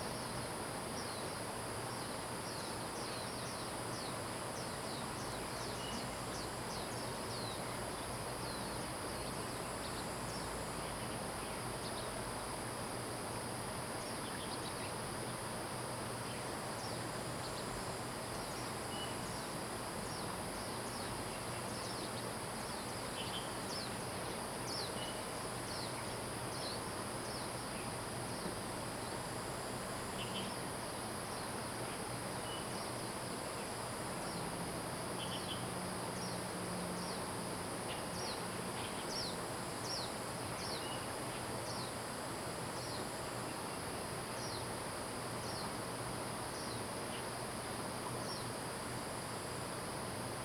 桃米巷, 埔里鎮桃米里 - Bird calls
In the morning, Bird calls, The sound of water streams
Zoom H2n MS+XY
Nantou County, Puli Township, 桃米巷11-3號